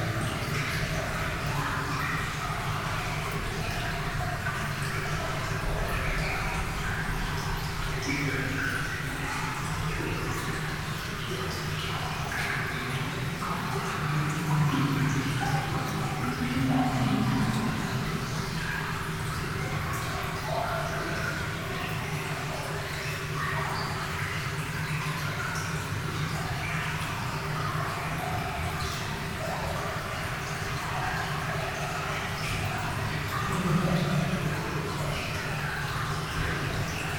Feeling the remoteness in the underground mine. We are far from everything and deeply underground. Water is falling in the tunnel in a distant and melancholic constant rain.